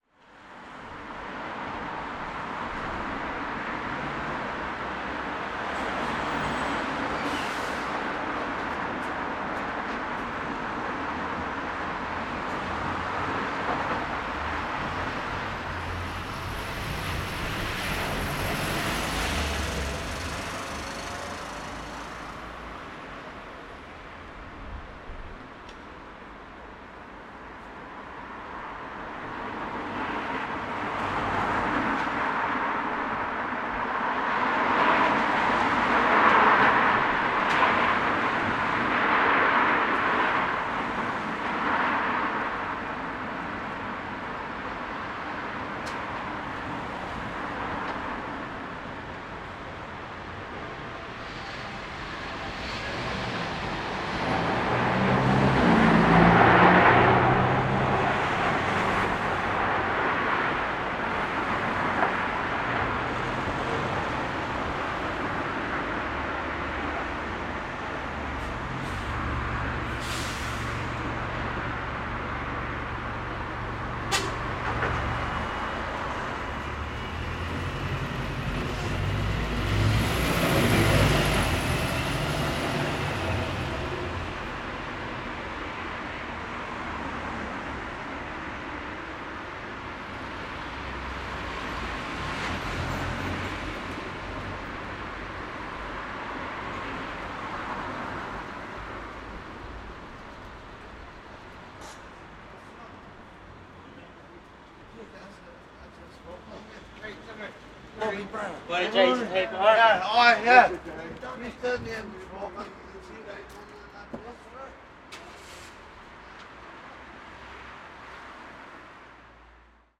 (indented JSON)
{
  "title": "Bradbury Pl, Belfast, UK - Laverys Bar Belfast",
  "date": "2020-10-21 19:12:00",
  "description": "Recording in front of Laverys Bar which is closed. Calmer space, little bit of wind, fewer movement from people and vehicles. This is five days after the new Lockdown 2 in Belfast started.",
  "latitude": "54.59",
  "longitude": "-5.93",
  "altitude": "8",
  "timezone": "Europe/London"
}